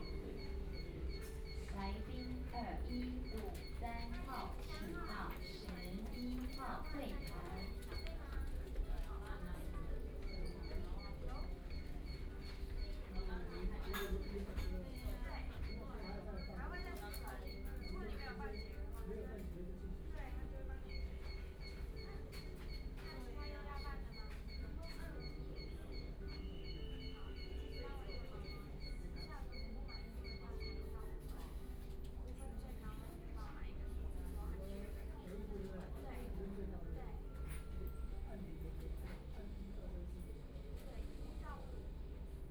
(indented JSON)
{
  "title": "Guangming Rd., Beitou - In the bank",
  "date": "2013-12-05 15:26:00",
  "description": "In the bank, Binaural recording, Zoom H6+ Soundman OKM II",
  "latitude": "25.14",
  "longitude": "121.50",
  "altitude": "21",
  "timezone": "Asia/Taipei"
}